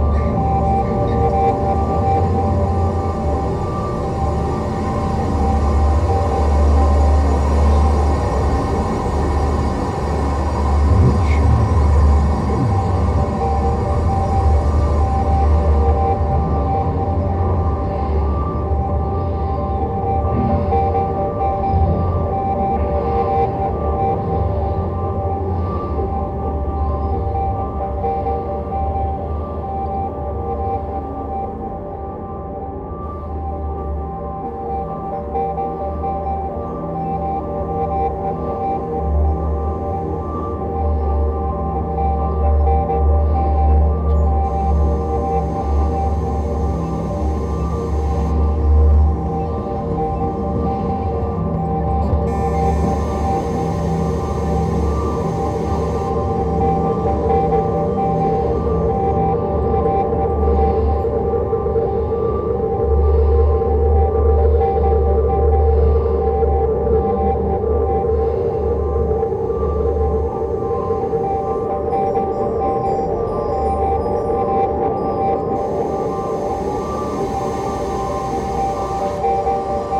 At the temporary sound park exhibition with installation works of students as part of the Fortress Hill project. Here the close up recorded sound of the water fountain sculpture realized by Raul Tripon and Cipi Muntean in the first tube of the sculpture.
Soundmap Fortress Hill//: Cetatuia - topographic field recordings, sound art installations and social ambiences